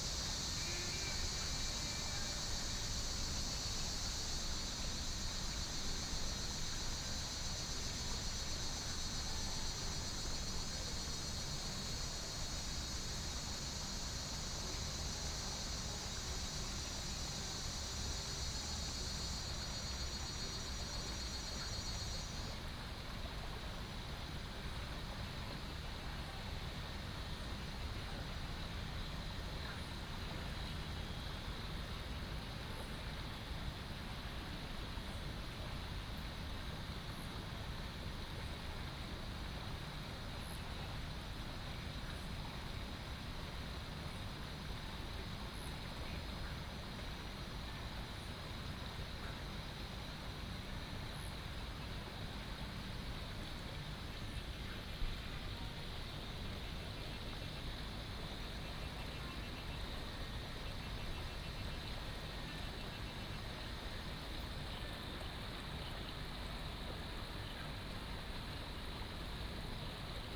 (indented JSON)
{
  "title": "TaoMi, Nantou County - the stream",
  "date": "2015-06-09 18:59:00",
  "description": "Next to the stream, The sound of water streams, Cicadas cry, Frogs chirping",
  "latitude": "23.94",
  "longitude": "120.93",
  "altitude": "468",
  "timezone": "Asia/Taipei"
}